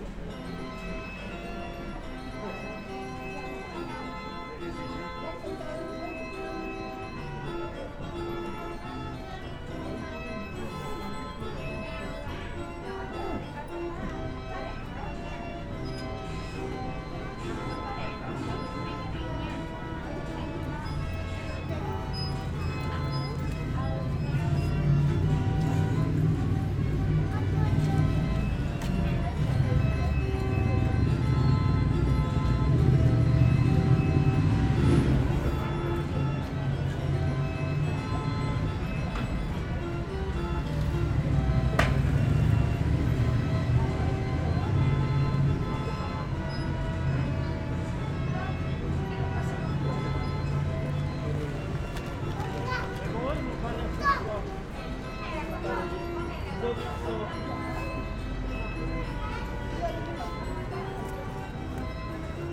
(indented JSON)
{
  "title": "Place Gutenberg, Strasbourg, Frankreich - carousel",
  "date": "2020-10-12 16:20:00",
  "description": "the old children's carousel with music and passers-by, parents and their children, ringing when the ride starts and ambient noise\nzoom h6",
  "latitude": "48.58",
  "longitude": "7.75",
  "altitude": "152",
  "timezone": "Europe/Paris"
}